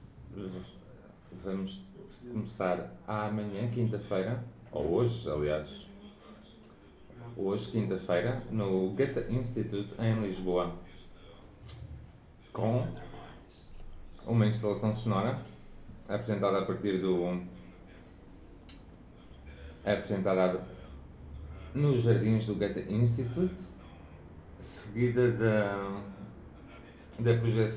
Lisboa, RadiaLX radio festival - broadcast started
radialx broadcast has just started both as an internet stream and on FM radio